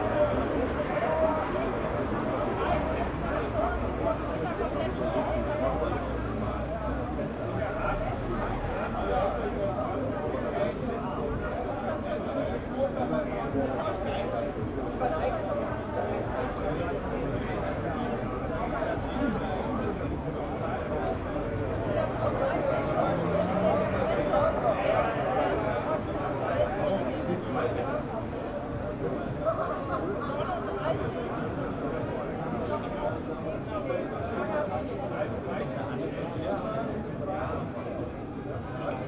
berlin, ohlauer straße: vor club - the city, the country & me: guests in front of the club
the city, the country & me: may 29, 2011